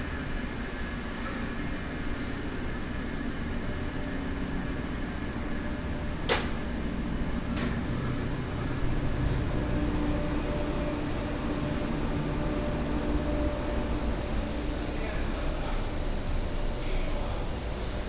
永安漁港, Xinwu Dist. - restaurant and market
walking in the Sightseeing restaurant market, Is preparing for business